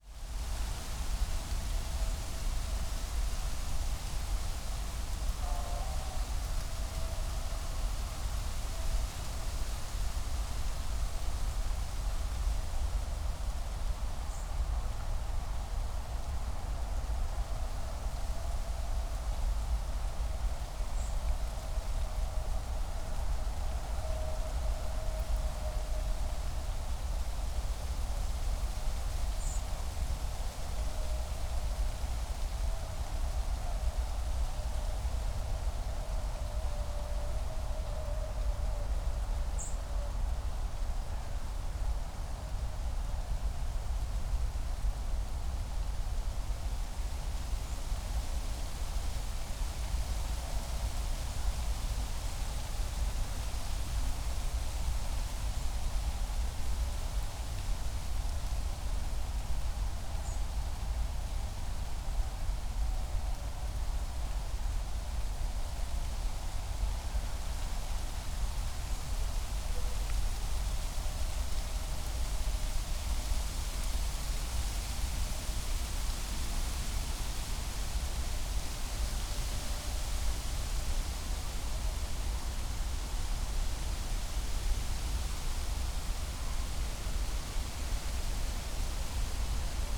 Tempelhofer Feld, Berlin, Deutschland - wind in poplar trees
place revisited
(Sony PCM D50, DPA4060)
Berlin, Germany, 25 October 2014